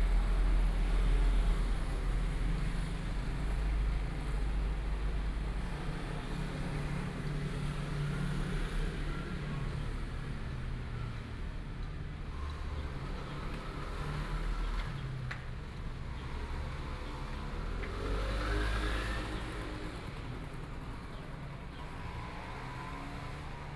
Chang'an St., Miaoli City - Morning town
The sound of traffic, Sitting next to a convenience store, Zoom H4n+ Soundman OKM II
Miaoli County, Taiwan, 8 October 2013, ~09:00